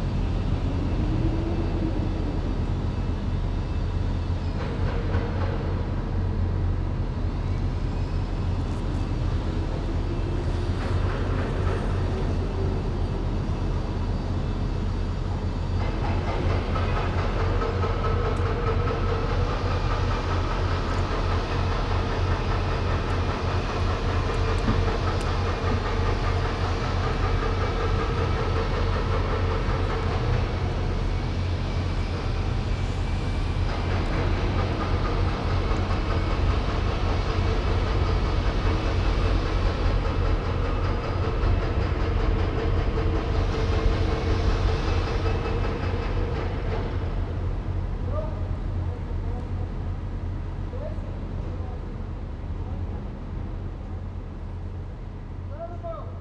Yerevan, Arménie - Construction works
Erevan is a growing city. We are here on the center of a very big construction works. It's not especially an ASMR sound. During all day it sounds like that.